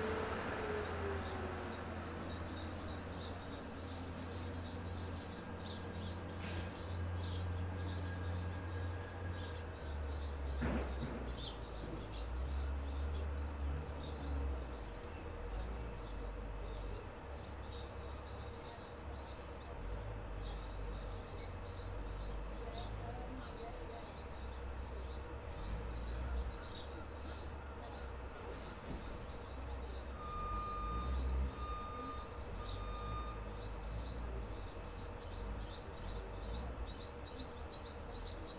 Dorchester South train station, Dorchester, Dorset, UK - Train station platform.
Sitting under the metal shelter on Platform 1 at 7am. Baby sparrows making a noise, two women chatting about 20 feet to the right. Builders are working on the Brewery Square development behind; you can hear the machinery, banging, reversing beeps. A train from London Waterloo to Weymouth pulls up at platform 2, then leaves.
7 June 2016